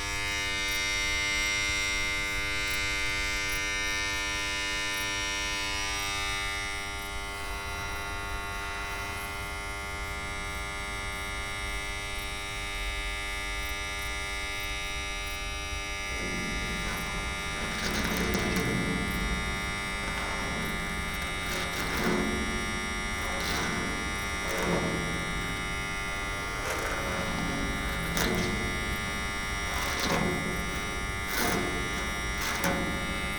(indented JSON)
{
  "title": "city park, Maribor - transformator, night crickets, fingernails",
  "date": "2015-08-01 22:18:00",
  "latitude": "46.57",
  "longitude": "15.65",
  "altitude": "317",
  "timezone": "Europe/Ljubljana"
}